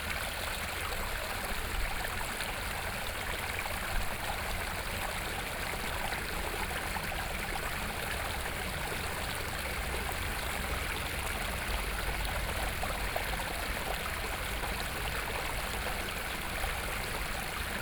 {"title": "員山公園, Yuanshan Township - The sound of water", "date": "2014-07-22 12:01:00", "description": "In the Park, The sound of water\nSony PCM D50+ Soundman OKM II", "latitude": "24.75", "longitude": "121.72", "altitude": "16", "timezone": "Asia/Taipei"}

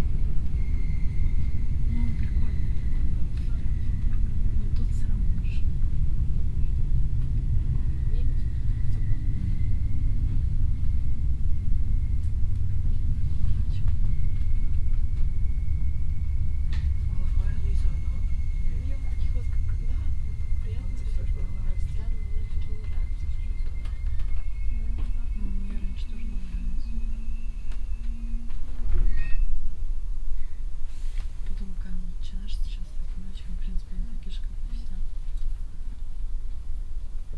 {"title": "in regio train, next stop glaubitz", "date": "2009-06-18 11:12:00", "description": "in a regio train - conversation of two russian women, anouncement of next stop glaubitz\nsoundmap d: social ambiences/ listen to the people in & outdoor topographic field recordings", "latitude": "51.31", "longitude": "13.37", "altitude": "100", "timezone": "Europe/Berlin"}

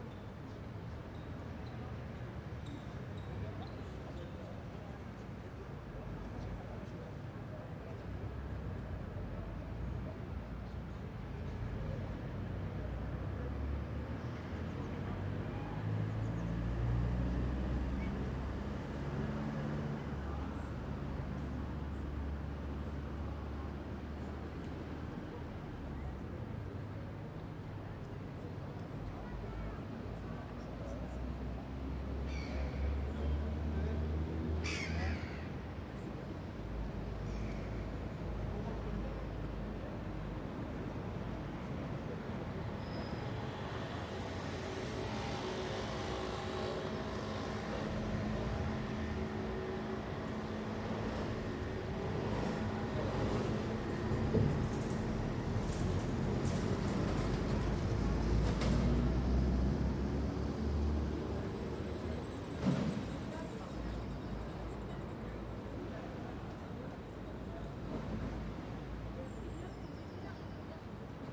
{"title": "Quai de Valmy, Paris, France - AMB PARIS CANAL ST MARTIN MS SCHOEPS MATRICED", "date": "2022-02-22 12:45:00", "description": "This is a recording of the Quai de Valmy near to the Canal St Martin in Paris. I used Schoeps MS microphones (CMC5 - MK4 - MK8) and a Sound Devices Mixpre6.", "latitude": "48.87", "longitude": "2.36", "altitude": "46", "timezone": "Europe/Paris"}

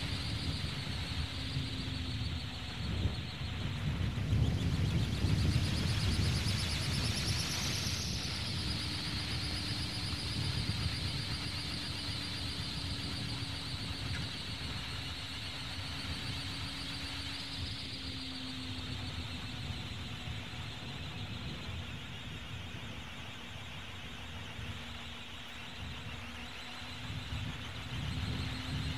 {"title": "Strzeszyn, Poznan outskirts - propeller", "date": "2013-05-04 12:17:00", "description": "a small propeller attached to a tool shed, swooshing in the spring wind.", "latitude": "52.46", "longitude": "16.85", "altitude": "92", "timezone": "Europe/Warsaw"}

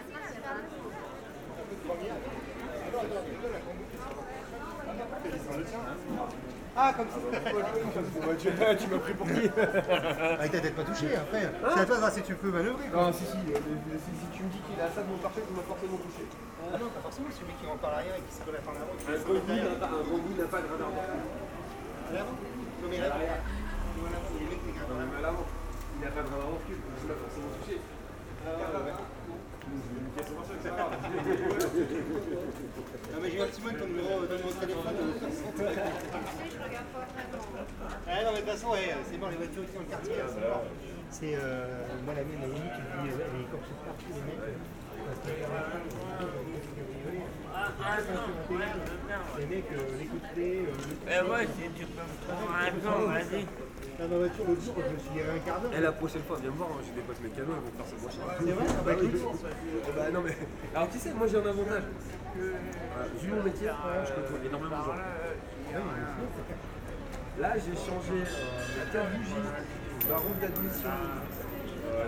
{"title": "Tours, France - Touristic atmosphere in the Colbert street", "date": "2017-08-12 17:10:00", "description": "Touristic atmosphere in the Colbert street, an alive and noisy street where visitors are walking along the bars and the restaurants. Sound of the small touristic train and drunk bums.", "latitude": "47.40", "longitude": "0.69", "altitude": "58", "timezone": "Europe/Paris"}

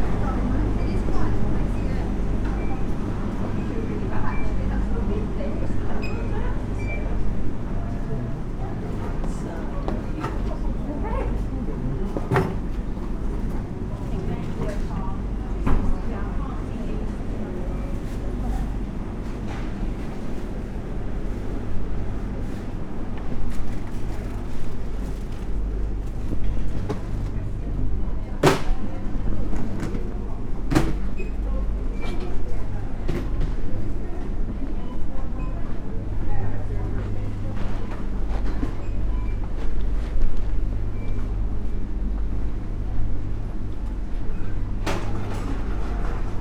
{"title": "Supermarket, Malvern, UK", "date": "2022-09-09 14:06:00", "description": "Down the freezer aisle and then the bread department, through the tills and briefly outside.\nMixPre 6 II with 2 Sennheiser MKH 8020s.", "latitude": "52.11", "longitude": "-2.33", "altitude": "135", "timezone": "Europe/London"}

